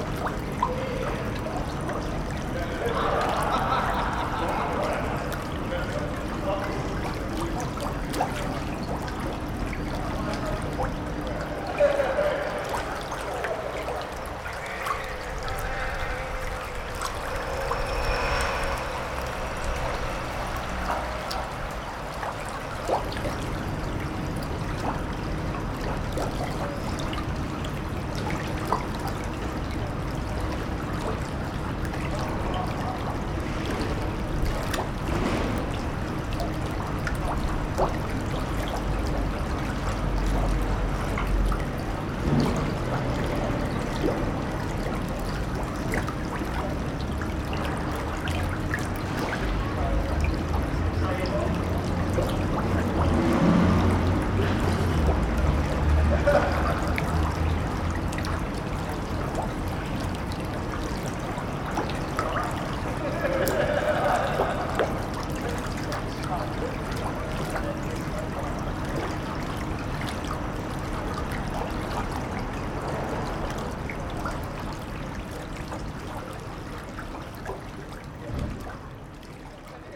The Holy Brook behind the Library in Reading on cloudy Tuesday afternoon. Sony M10 Rode Videomic ProX
Reading, UK